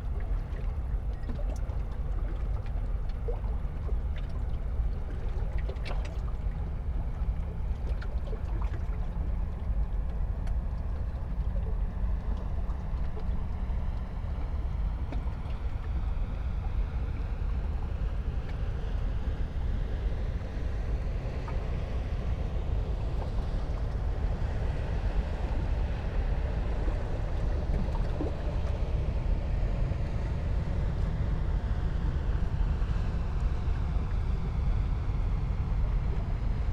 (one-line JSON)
{"title": "Rhein river banks, Riehl, Köln, Deutschland - ships passing-by", "date": "2019-07-18 21:05:00", "description": "sitting at the river Rhein, Köln Riehl, spotting at ships\n(Sony PCM D50, Primo EM172)", "latitude": "50.96", "longitude": "6.99", "altitude": "37", "timezone": "Europe/Berlin"}